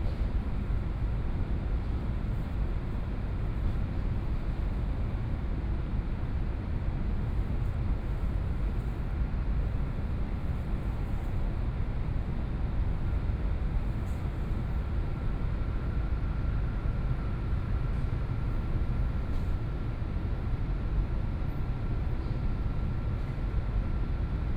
ZhongAn Park, Taipei City - Noise
Environmental Noise, Night in the park
Please turn up the volume a little
Binaural recordings, Sony PCM D100 + Soundman OKM II